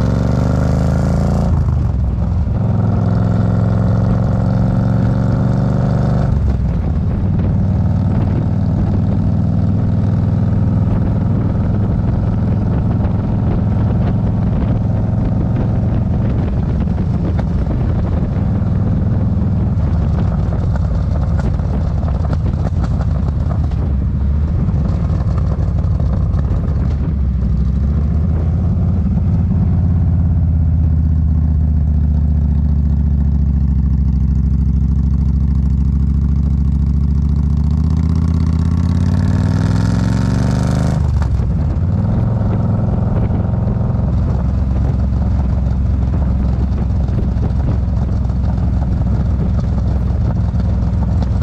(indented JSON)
{
  "title": "The Circuit Office, Oliver's Mount, Olivers Mount, Scarborough, UK - a lap of oliver's mount ...",
  "date": "2022-08-12 14:45:00",
  "description": "a lap of oliver's mount ... on a yamaha xvs 950 evening star ... go pro mounted on sissy bar ... re-recorded from mp4 track ...",
  "latitude": "54.26",
  "longitude": "-0.41",
  "altitude": "95",
  "timezone": "Europe/London"
}